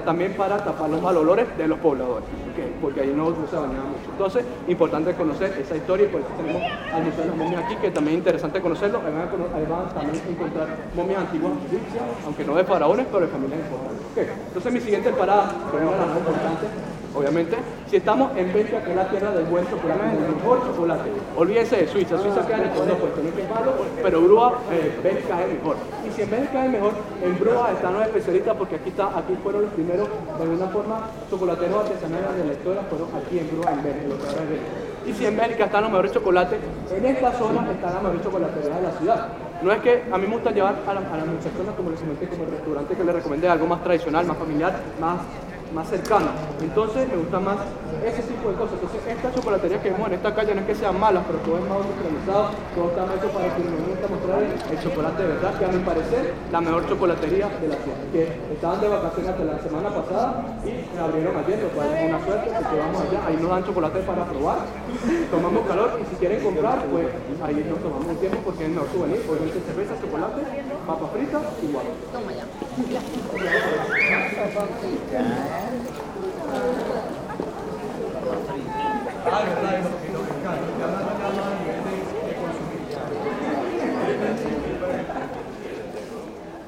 Brugge, België - Tourist guidance
Arentshuis. Tourist guidance in Spanish for many tourists, automatic speech repeated a thousand times. The guide voice reverberates on the brick facades of this small rectangular square.